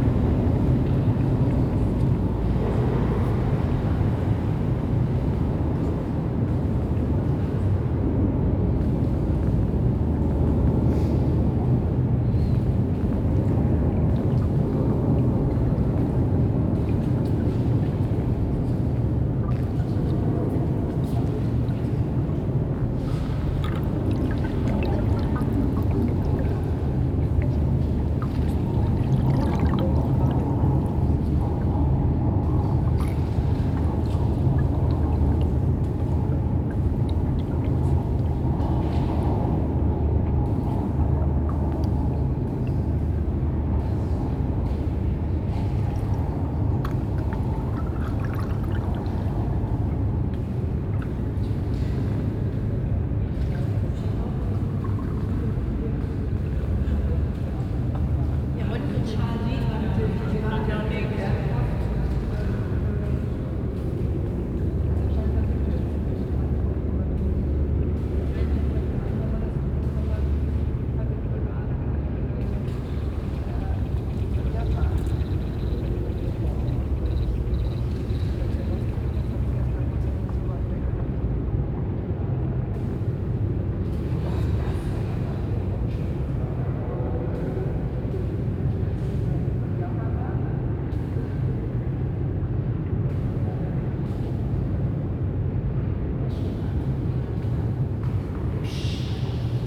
At Zeche Zollverein in Hall 8. The sound of a temporary sound installation by sound artist Christine Kubisch plus steps and voices of visitors during the opening. The title of the work is" Unter Grund". The sound room is composed out of recordings of the 1000 m underground constantly working water pump system underneath the mine areal.
The work has been presented during the festival"Now"
soundmap nrw - topographic field recordings, social ambiences and art places